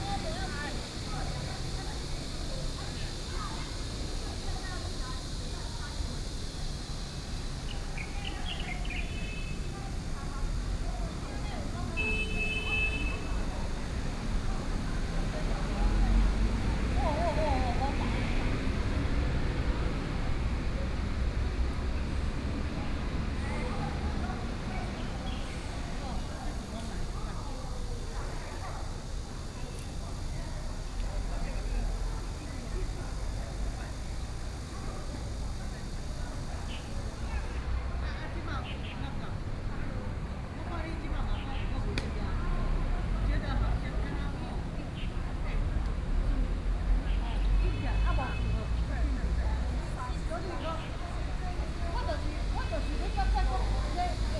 Diu Diu Dang Forest, Yilan City - in the Square
Sitting in the Square, Very hot weather, Many tourists
Sony PCM D50+ Soundman OKM II